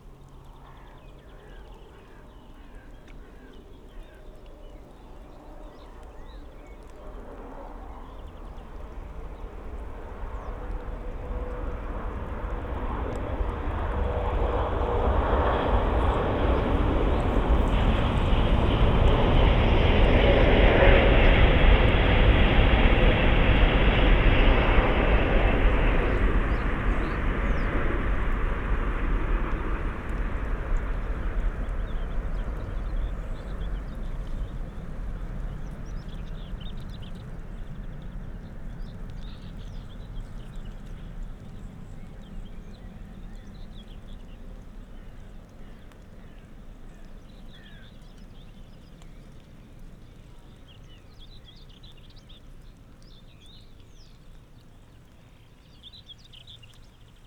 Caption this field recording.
little pond near river elbe, within the flooding zone, light rain, insects, cuckoos, a frog, wind in reed, a high speed train passes in the distance, (SD702, Audio Technica BP4025)